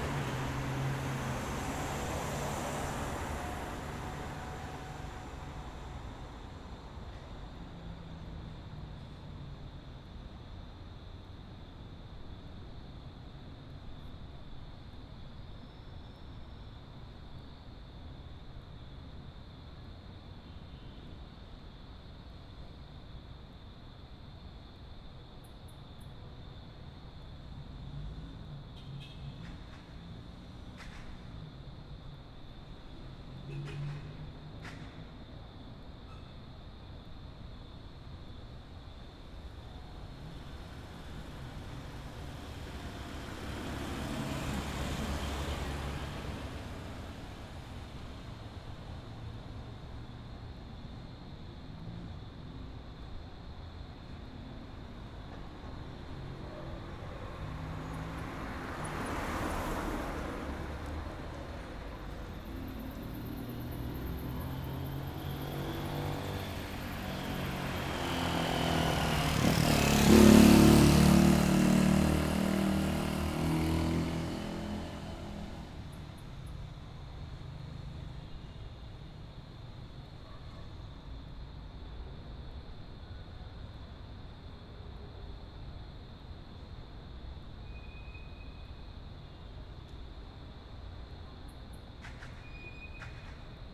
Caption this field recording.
Se aprecia uno de los lugares más tranquilos de la loma en horas de la noche